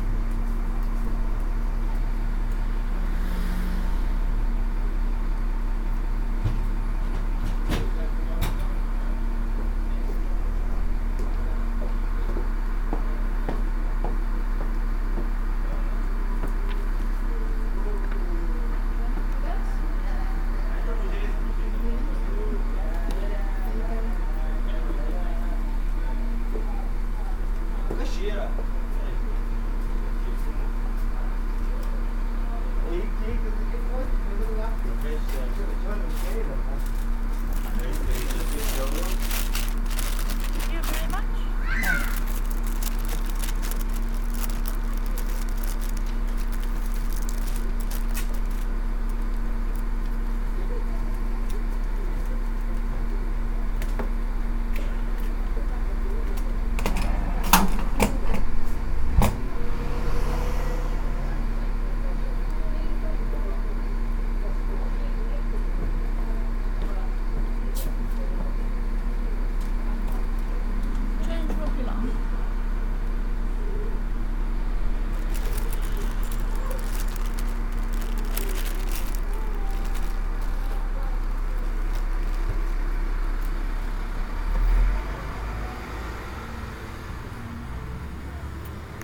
This is the soundscape inside Jacksons of Reading when it was still trading in 2011. You can hear something of the acoustic inside, and how all the handkerchiefs that I was trying to buy were under glass in an old fashioned glass display unit with wooden doors. You can hear the buses idling outside, and the sounds towards the end of the recording are of the pneumatic change chute in operation; the sound as the change is sucked up into the tubes, and the sound as it is hurled out again containing change and a receipt. It was the last such tube system in operation in the UK.